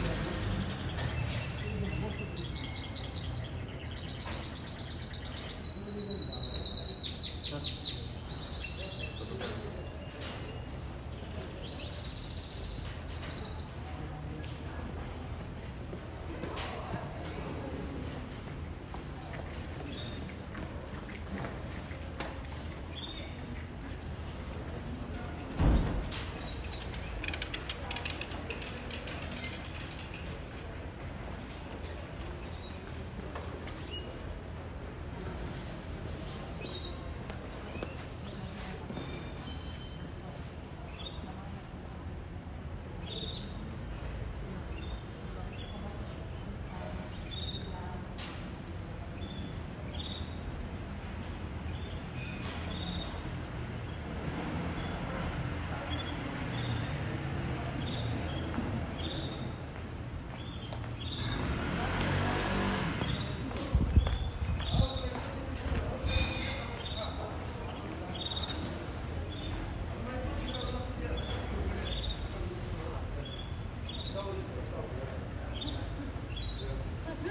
Via delle Belle Arti, Bologna BO, Italia - uccellini in gabbia allangolo con lufficio postale
Due piccole gabbie di uccellini vengono appese ogni mattina fuori dalle finestre sopra l'ufficio postale di via Belle Arti, all'incrocio con via de' Castagnoli.
Gli uccellini sono presenti in questo angolo di strada da almeno 31 anni, forse in numero minore che una decina d'anni. Il cinguettio degli uccelli in gabbia può essere considerato un landmark sonoro per le ore diurne (in assenza di pioggia o neve).
La registrazione è stata fatta alle ore 10.30 di mattina, con Bologna da pochi giorni in "zona gialla" per il contenimento del contagio da Coronavirus.
C'è meno passaggio di persone del solito e meno traffico poiché l'università non ha riattivato pienamente i corsi.
Emilia-Romagna, Italia, 9 February 2021